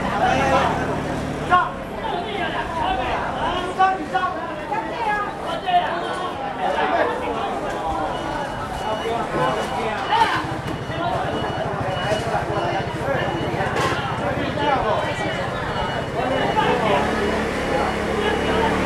Zhongzheng N. Rd., Sanchong Dist., New Taipei City - Next market
Fruits and vegetables wholesale market
Sony Hi-MD MZ-RH1 +Sony ECM-MS907